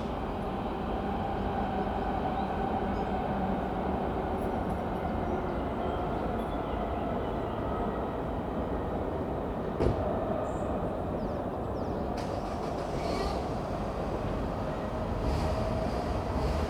Grunewald, Berlin, Germany - Grunewald Cemetery - entrance bridge and squeaky gate
Sunday, fine weather. The cemetery is surrounded on by rail tracks. The entrance bridge crosses one that no train has used for sometime. Strangely a working red signal still shines.